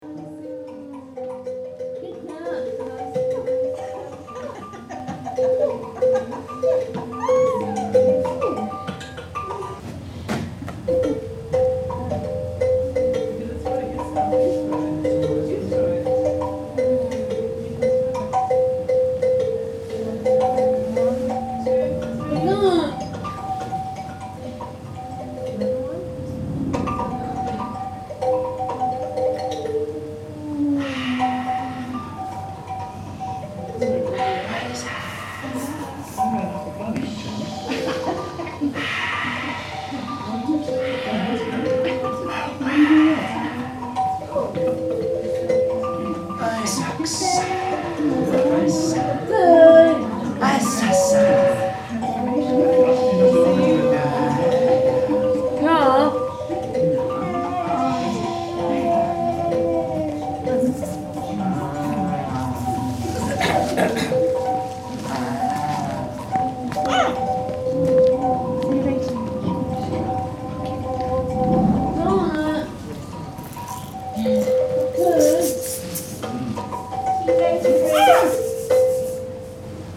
Recorded – lap-top on the floor – during my visit to one of the regular drop-in sessions of ‘Ambient Jam’ in the Albany in Deptford. A group of artists has maintained and developed over a number of years this open space of encounters for more and less handicapped people. On the day of my visit, the artist leading musically through the ‘ambient jamming’ is Charles Hayward.
No-Go-Zones radio project meets Entelechy Arts.
more recordings archived at: